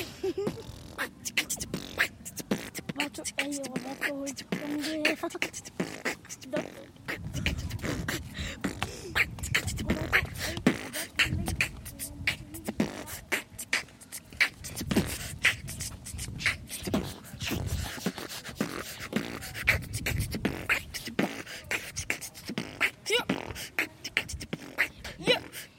Soldiner Kiez, Wedding, Berlin, Deutschland - Wollankstraße 57A-D, Berlin - Beatbox battle by Toni and Roberto
Wollankstraße 57A-D, Berlin - Beatbox battle by Toni and Roberto.
In the course of recording I was interrupted by Roberto, Toni and Tyson, three yound teenagers from the neigbourhood. Two of them turned out to be astonishingly skilled beat box artists who immediately engaged in a 'beatbox battle'.
[I used the Hi-MD-recorder Sony MZ-NH900 with external microphone Beyerdynamic MCE 82]